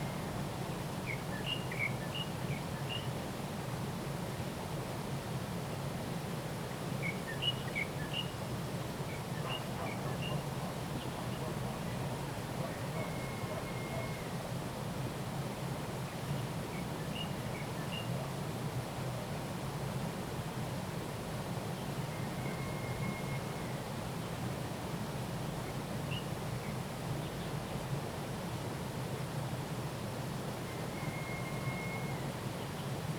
Lane 水上, 桃米里, Puli Township - Bird and Stream
The sound of water, Bird calls, Bird and Stream, Chicken calls
Zoom H2n MS+XY
Nantou County, Puli Township, 水上巷